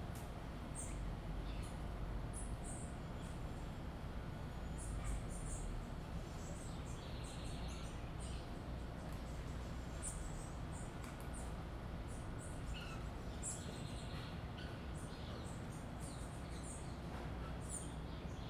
Ondina, Salvador - Bahia, Brazil - A small urban farm.
The most beautiful urban garden. Mango, Passion Fruit, Coconut and Papaya Trees. Dogs, Cats, Tortoises, Turkeys and Chickens, and of course the ambience of Brazil.